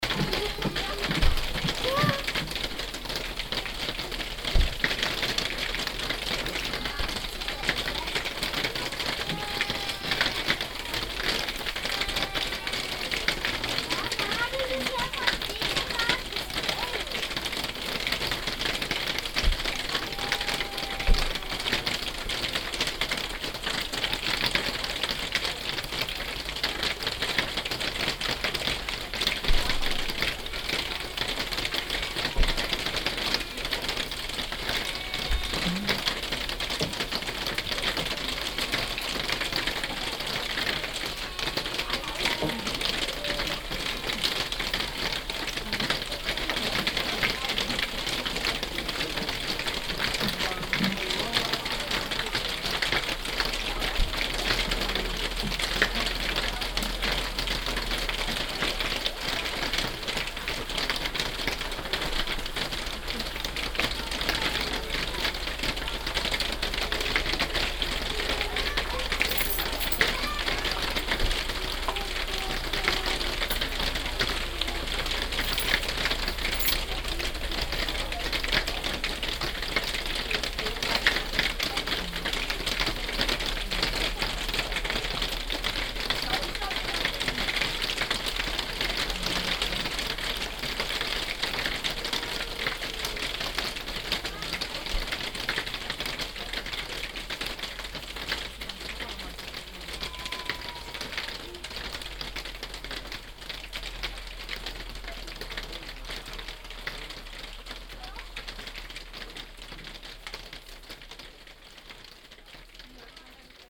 Underneath the roof of one of the park stores - heavy rain
international sound scapes - topographic field recordings and social ambiences